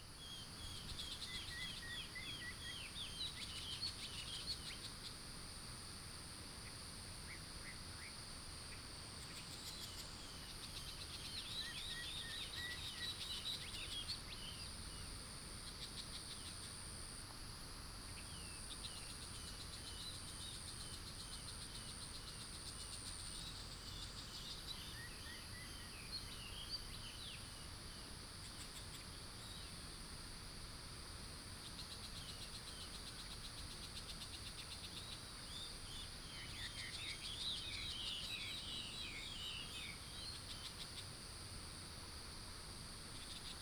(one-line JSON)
{"title": "青蛙ㄚ 婆的家, 桃米里, Puli Township - Bird calls", "date": "2015-09-17 05:40:00", "description": "In the morning, Bird calls", "latitude": "23.94", "longitude": "120.94", "altitude": "463", "timezone": "Asia/Taipei"}